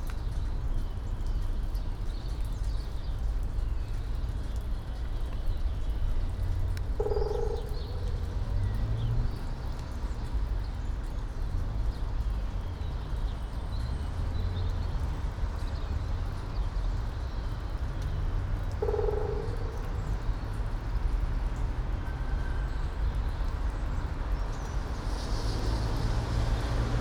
all the mornings of the ... - apr 3 2013 wed